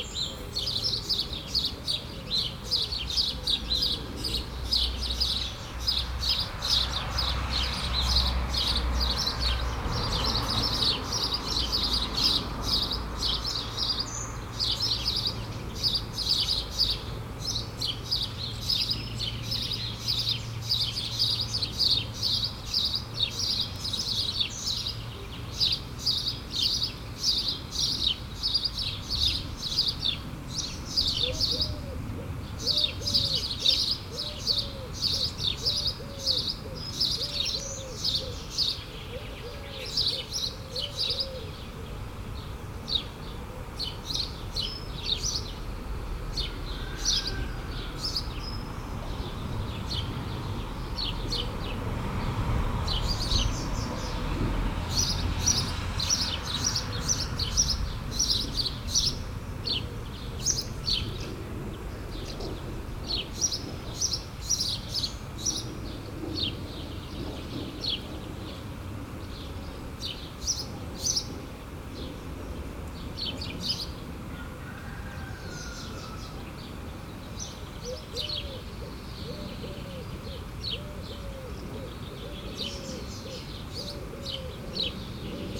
Saint-Martin-de-Nigelles, France - The sparrows farm
Just near a farm, sparrows shouting. Rural atmosphere, cars, tractors, planes.